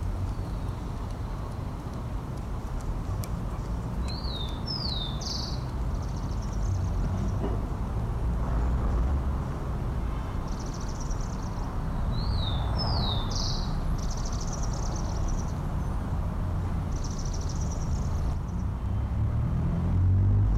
Cra. 8 ## 107 - 41, Bogotá, Colombia - Santa Ana wets
In this audio you will hear many sounds such as the sound of birds, cars passing, a person's footsteps, a dog's footsteps, wind, a person's cleaning dishes in his apartment
Región Andina, Colombia, 23 May 2021, 06:30